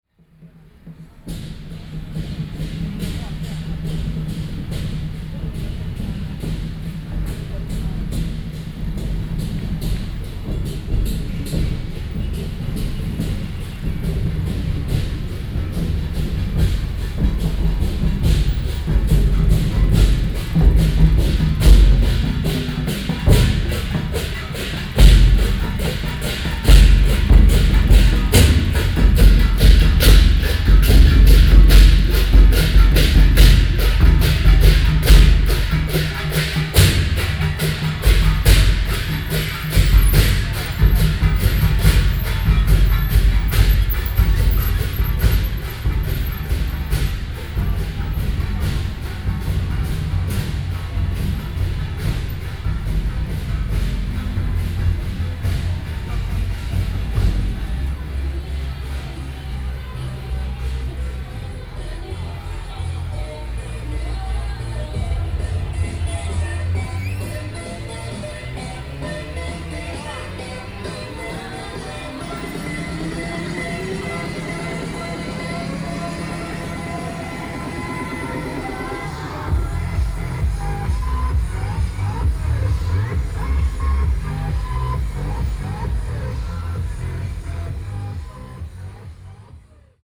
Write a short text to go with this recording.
Firework, Traditional temple festivals, Gong, Traditional musical instruments, Binaural recordings, ( Sound and Taiwan - Taiwan SoundMap project / SoundMap20121115-24 )